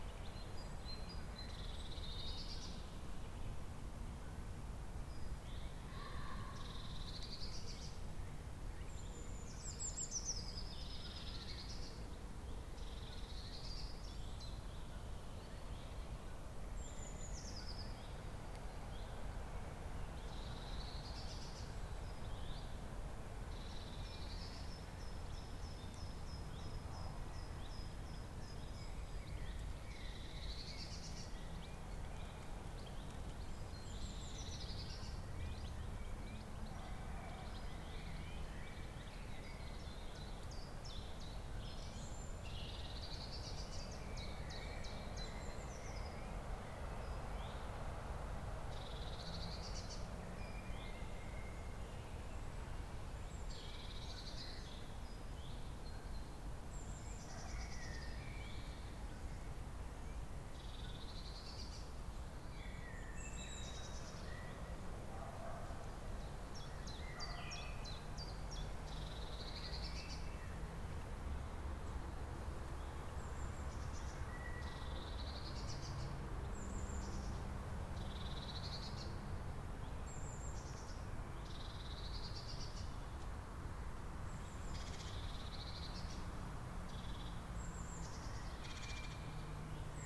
Suchsdorf forest, Kiel, Deutschland - Forest ambience with anthropophony
Forest ambience with anthropophony, a horse and some people passing by, birds, wind in the trees, dog barking, distant traffic noise and bassy agricultural machinery. Zoom H6 recorder in-built xy microphone with furry wind protection.